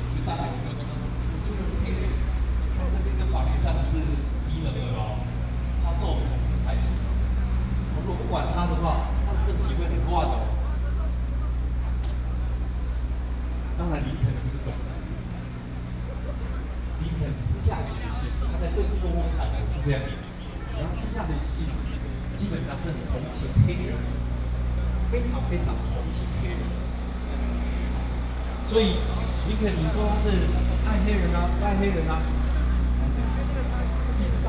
Ministry of Education, Taipei City, Taiwan - occupied the Ministry of Education
Protest site, High school students occupied the Ministry of Education
Please turn up the volume a little. Binaural recordings, Sony PCM D100+ Soundman OKM II